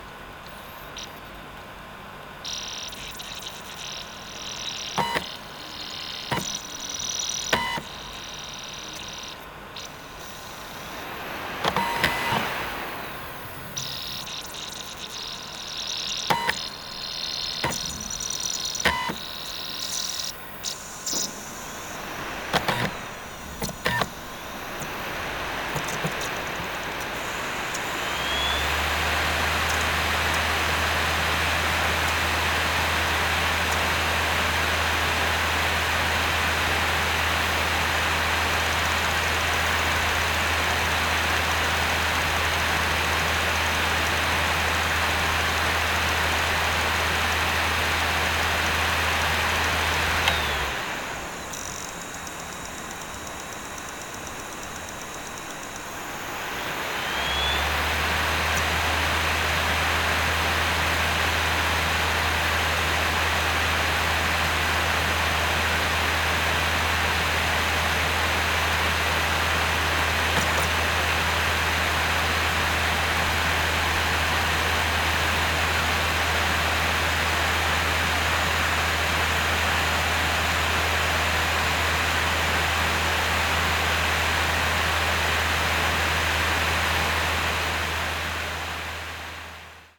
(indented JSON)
{
  "title": "Poznan, Mateckiego street - unreadable cd",
  "date": "2019-04-30 11:46:00",
  "description": "domestic recording. a sound that used to be almost an everyday experience but now becoming more and more obsolete. I'm getting rid of my old laptop. It's the last device I have with a cd drive. You can hear the sound of an unreadable cd. The drive is trying to access the files but it struggles and in the end just spins really fast endlessly. seems like I won't get to hear this any more. (roland r-07)",
  "latitude": "52.46",
  "longitude": "16.90",
  "altitude": "100",
  "timezone": "Europe/Warsaw"
}